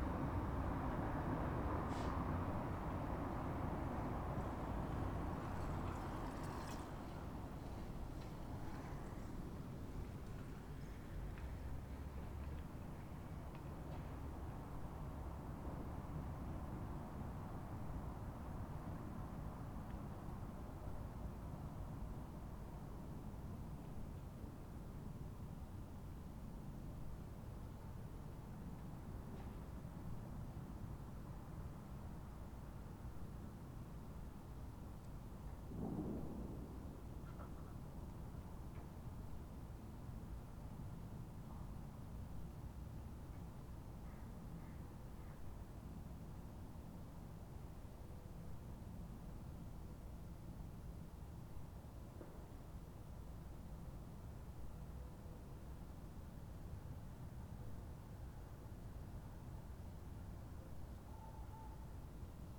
oderstraße/okerstraße: am sicherheitszaun des flughafens tempelhof - the city, the country & me: at the security fence of formerly tempelhof airport
cold afternoon, lightly trafficked street, cars, cyclists, pedestrians, birds
the city, the country & me: december 13, 2009